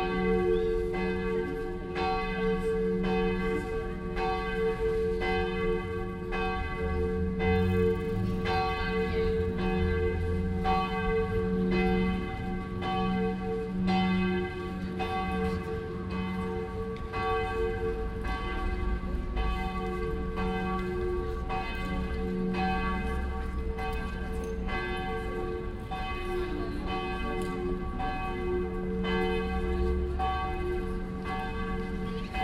hilden, mittelstrasse, st. johannes kirche
läuten zum abendgebet, zunächst aufgenommen in der kirche dann gang zum ausagng und aussenaufnahme
soundmap nrw:
topographic field recordings, social ambiences